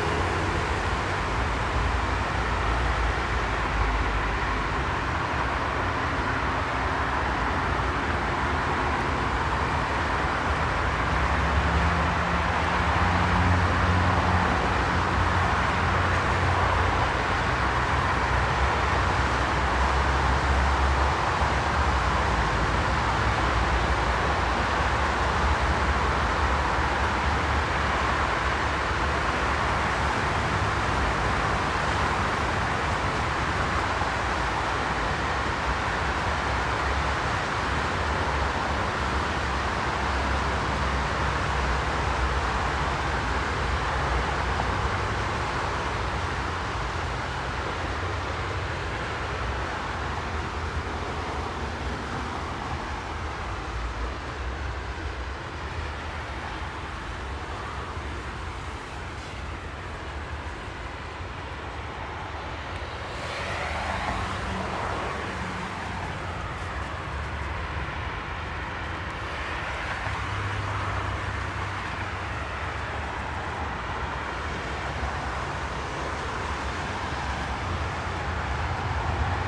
Rathauspassage parking garage bridge
ambient soundscape from the bridge of the parkplatz, Aporee workshop
Germany